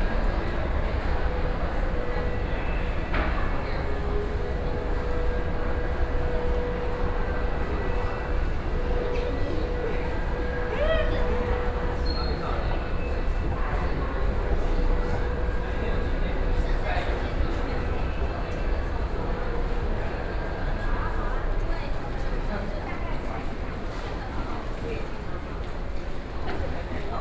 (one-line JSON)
{"title": "ESLITE SPECTRUM SONGYAN STORE - soundwalk", "date": "2013-09-10 14:26:00", "description": "ESLITE SPECTRUM SONGYAN STORE, Sony PCM D50 + Soundman OKM II", "latitude": "25.04", "longitude": "121.56", "altitude": "9", "timezone": "Asia/Taipei"}